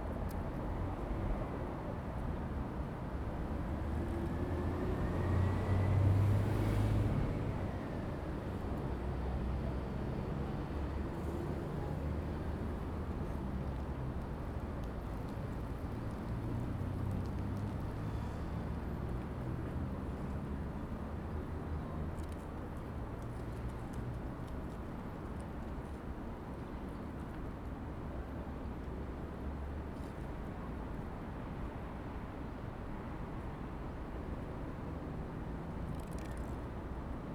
Nae-dong, Gimhae-si, Gyeongsangnam-do, 韓国 - in the Park
in the Park, Traffic Sound, Birds singing
Zoom H2n MS+XY